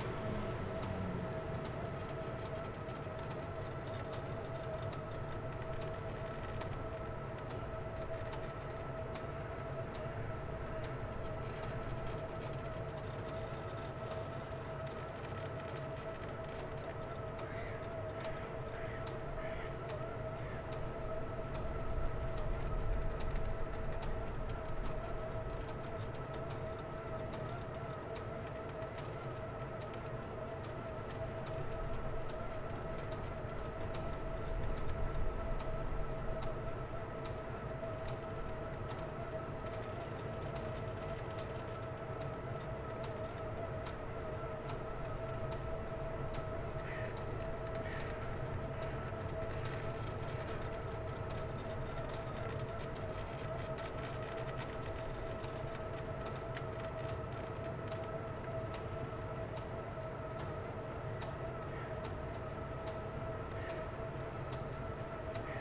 Kienberg, Berlin - summer bobsled run
During 2017, the IGA (international garden show) took place in this area. For some reason and among others, they built a summer bobsled run into the park. Only a few people seem to enjoy it, on a late winter Friday afternoon. The whole construction is constantly emitting mechanical and electric sounds. A questionable pleasure to my ears...
(SD702, SL502 ORTF)